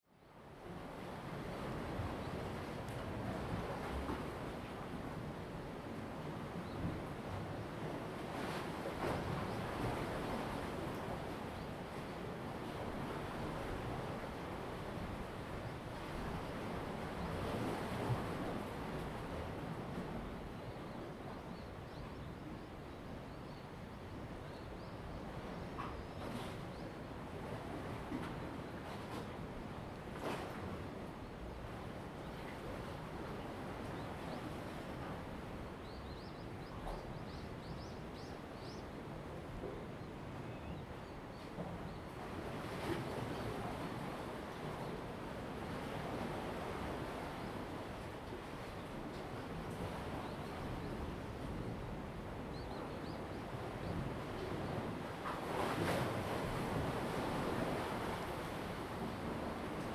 On the bank, Tide and Wave
Zoom H2n MS+XY